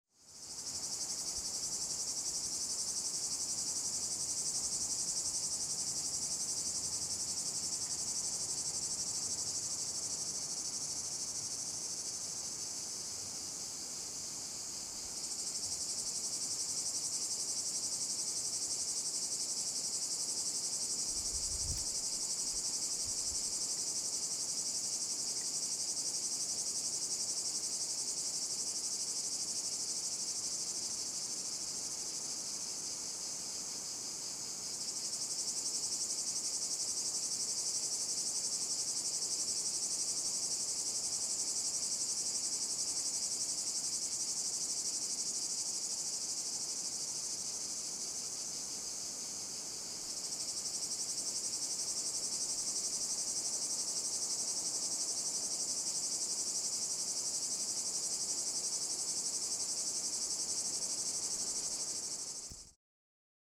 {"title": "Prés de la Molière, Saint-Jean-du-Gard, France - Noisy Day with Cicadas - part 1", "date": "2020-07-18 14:00:00", "description": "Noisy day with Cicadas in the Cevennes National Park.\nSet up: Tascam DR100 MK3 / Lom Usi Pro mics in ORTF.", "latitude": "44.12", "longitude": "3.89", "altitude": "332", "timezone": "Europe/Paris"}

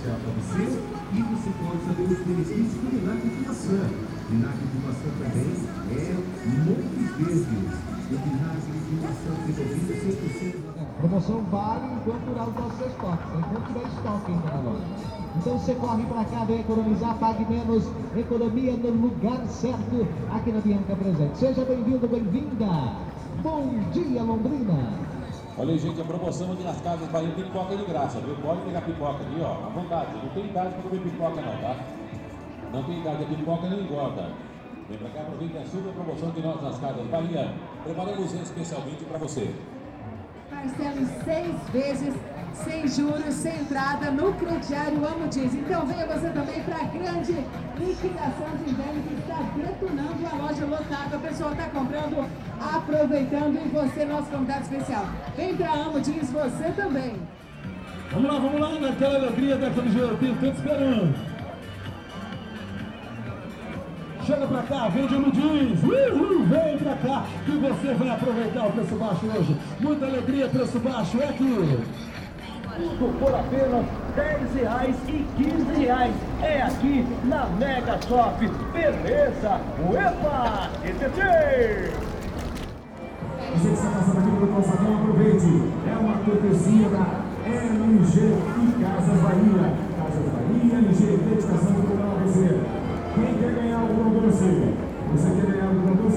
{"title": "Calçadão de Londrina: Locutores de lojas - Locutores de lojas / Stores Talkers", "date": "2017-08-26 12:09:00", "description": "Panoramas sonoros: trechos de sete locutores de lojas em dias, horários e locais variados no Calçadão de Londrina. Utilizando microfones e caixas amplificadoras de som instaladas em espaços de transição entre o espaço público e os interiores das lojas, as vozes dos locutores e as músicas utilizadas por eles se destacavam no Calçadão.\nSound Panoramas: Excerpts from seven store speakers on various days, times and locations on the Londrina Boardwalk. Using microphones and amplifiers installed in transitional spaces between the public space and the interiors of the stores, the voices of the announcers and the songs used by them stood out on the Boardwalk.", "latitude": "-23.31", "longitude": "-51.16", "altitude": "612", "timezone": "America/Sao_Paulo"}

